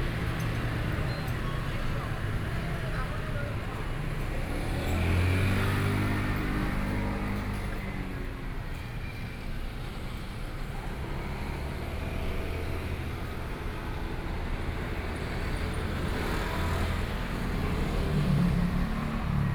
{"title": "Changhua, Taiwan - Intersection", "date": "2013-10-08 12:52:00", "description": "Traffic Noise, In front of convenience stores, Zoom H4n + Soundman OKM II", "latitude": "24.08", "longitude": "120.56", "altitude": "26", "timezone": "Asia/Taipei"}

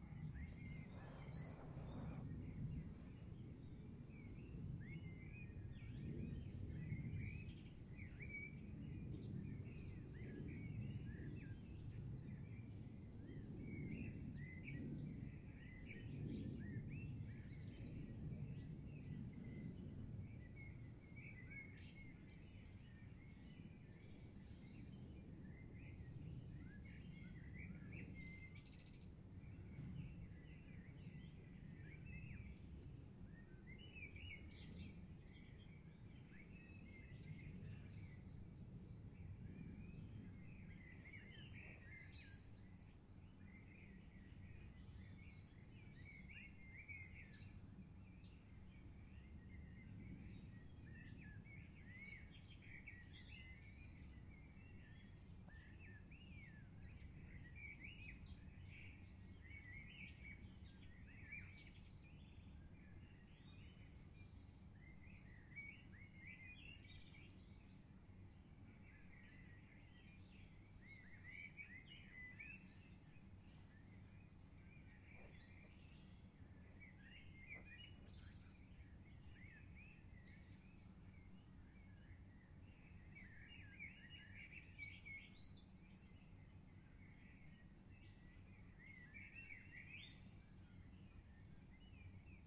{"title": "Lazise Provinz Verona, Italien - Camping Morning", "date": "2012-04-06 06:17:00", "description": "Early Morning singing Birds on a camping ground in Lazise, Italy.", "latitude": "45.49", "longitude": "10.73", "altitude": "82", "timezone": "Europe/Rome"}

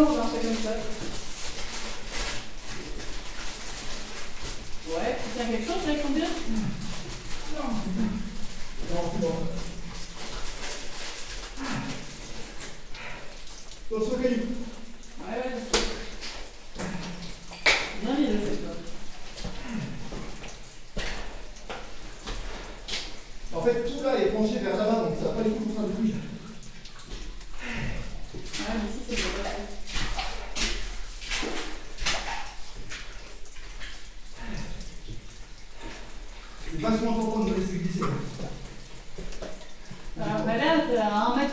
{"title": "Rimogne, France - Climbing a shaft", "date": "2018-02-11 10:55:00", "description": "Into the underground slate quarry, a friend is climbing a very inclined shaft. It's difficult to walk as everything is very sliding.", "latitude": "49.84", "longitude": "4.54", "altitude": "244", "timezone": "Europe/Paris"}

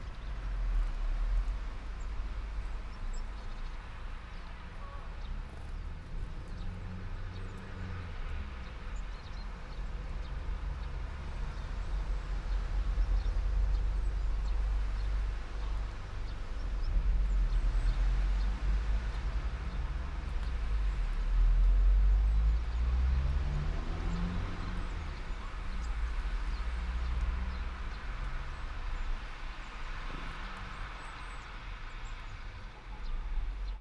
{"title": "all the mornings of the ... - feb 14 2013 thu", "date": "2013-02-14 09:44:00", "latitude": "46.56", "longitude": "15.65", "altitude": "285", "timezone": "Europe/Ljubljana"}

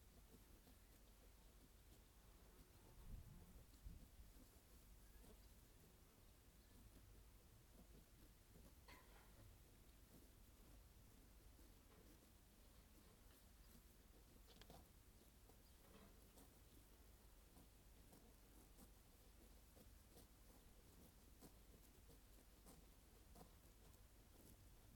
{"title": "North Hamarsland, Tingwall, Shetland Islands, UK - Eavesdropping on grazing sheep", "date": "2013-08-03 12:18:00", "description": "This is the sound of Pete Glanville's organic Shetland sheep grazing in their field. It is a very quiet recording, but I think that if you listen closely you can hear the sheep grazing in it. Recorded with Naint X-X microphones slung over a fence and plugged into a FOSTEX FR-2LE", "latitude": "60.22", "longitude": "-1.21", "timezone": "GMT+1"}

